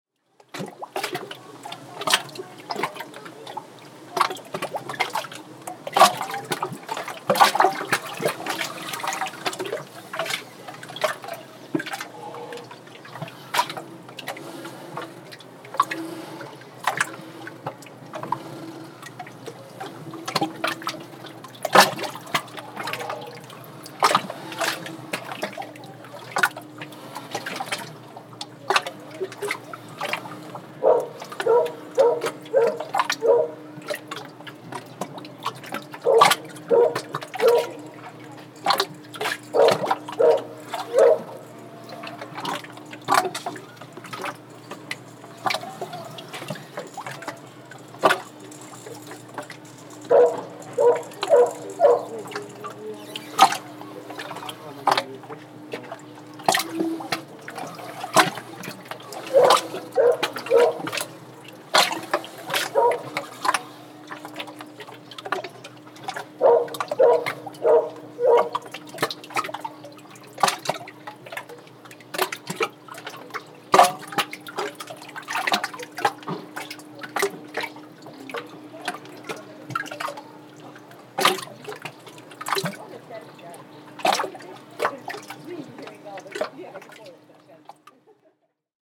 Lithuania - Yacht Club
Recordist: Raimonda Diskaitė
Description: Near the yacht club on the coast of the lagoon. Floating boats, dogs barking and random passengers talking. Recorded with ZOOM H2N Handy Recorder.